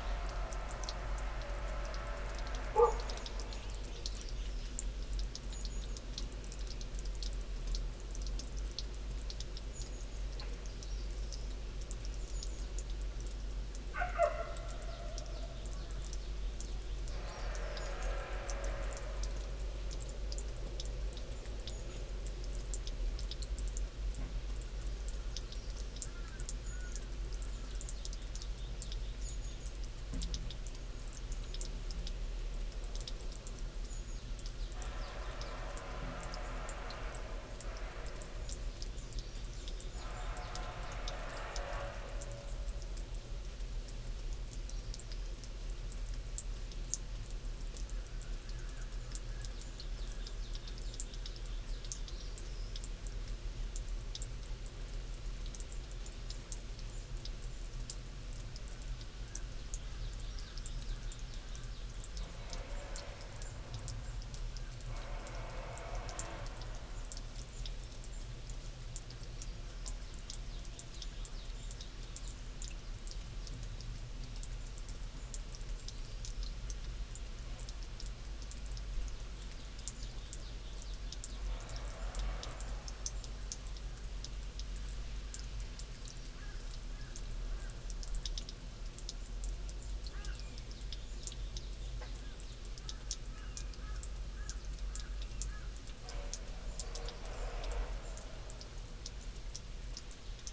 Irrigation channel in Taegol Valley
...sounds from throughout this long narrow valley reach the mics placed in a concrete box irrigation channel...a coughing dog, rooster and wind through nearby fur trees...spacially interesting...